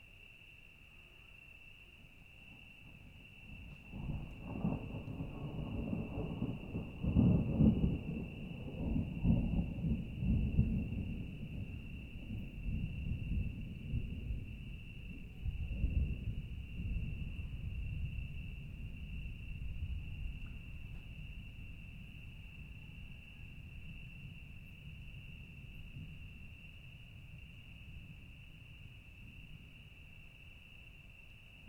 Campo do Gerês, Portugal - Thunderstorm - Thunderstorm
Thunderstorm in the distance, with criquets, owls and ocasional dogs, at Peneda-Geres park, Portugal. Recorded at 4 am in the morning with a SD mixpre6 and a BP4025 XY stereo microphone.